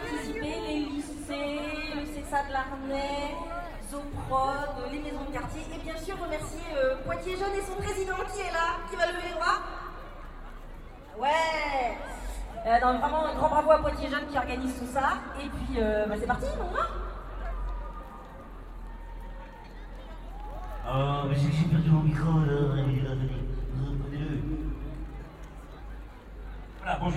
Poitiers, Central Place - Carnival, Tombola
Small town carnival in preperation